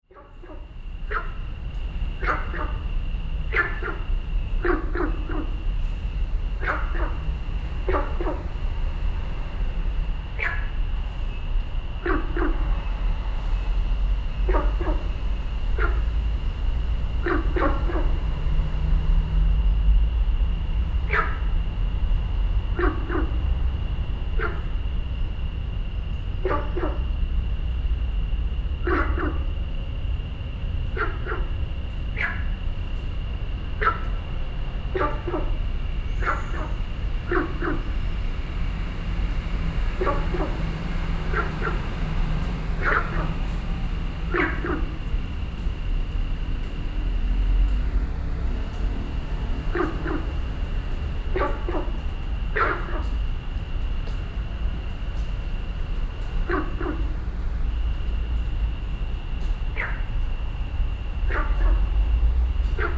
National Chiang Kai-shek Memorial Hall, Taipei - Frogs calling
Frogs calling, Sony PCM D50 + Soundman OKM II
台北市 (Taipei City), 中華民國, 4 June 2012, ~19:00